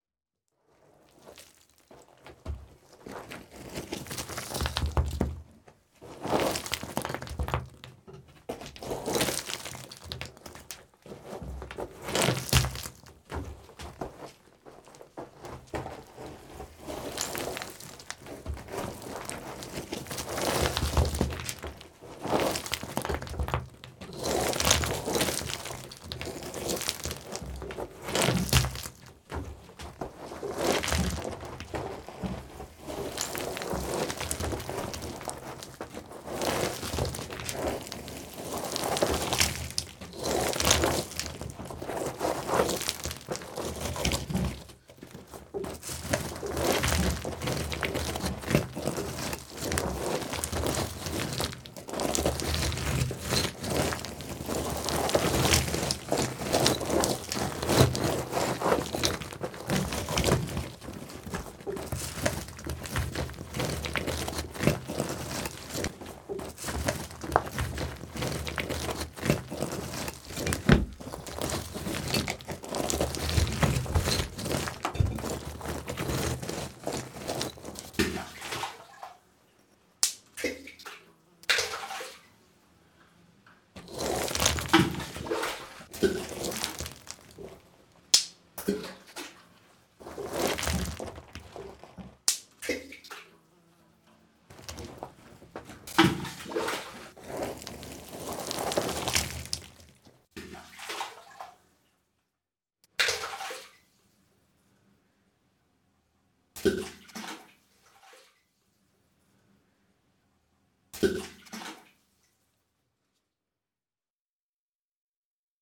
Επαρ.Οδ. Τριαντάρου-Φαλατάδου, Τήνος, Ελλάδα - Debris of old aqueduct
Debris recording at the place of the old aqueduct by the the soundscape team of E.K.P.A. university for for KINONO Tinos Art Gathering.
Recording Equipment: Ζoom Η2Ν